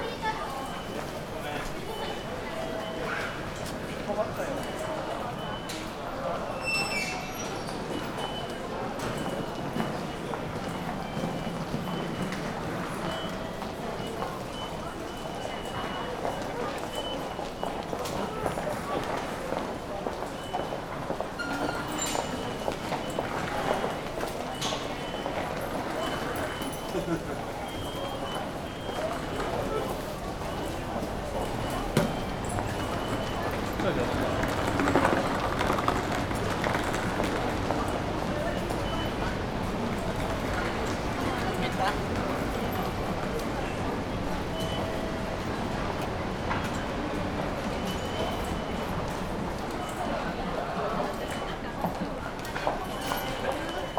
Tokyo, entrance to the Ochanomizu station - evening commuters
the place was dense with people, talking and rushing somewhere, beeps of the tickets gates, utility man cleaning the floor and moving stuff.
北葛飾郡, 日本